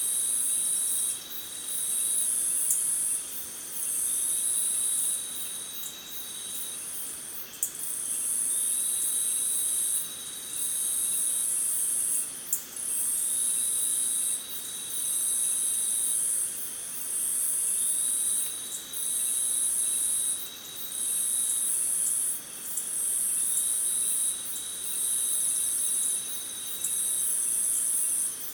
Iracambi - moonlight
recorded at Iracambi, an NGO dedicated to preserve and protect the Atlantic Forest
Muriaé - MG, Brazil, 28 July 2017, 21:30